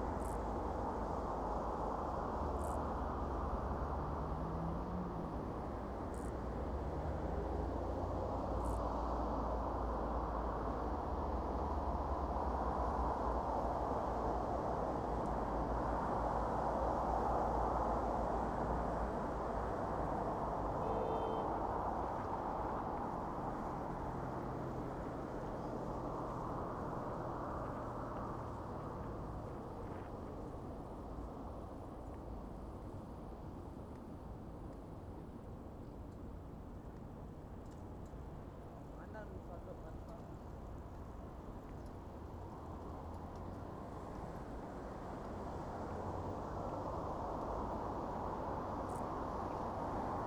Berlin Wall of Sound, Wall Parts Cement Factory 080909
Teltow, Germany